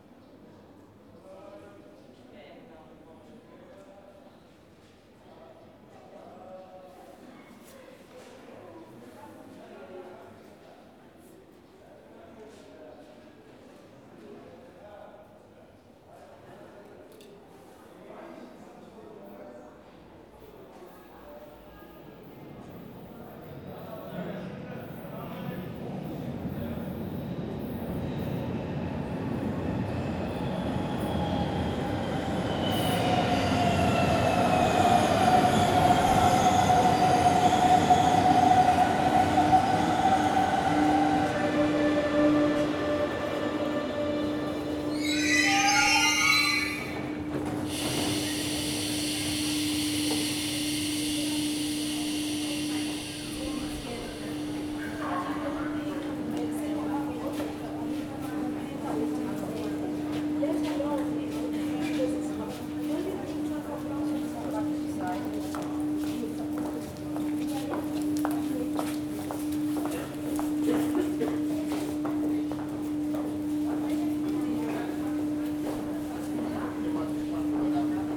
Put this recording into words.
The Underground station, trains comming and going, and sometimes it´s amazingly silent!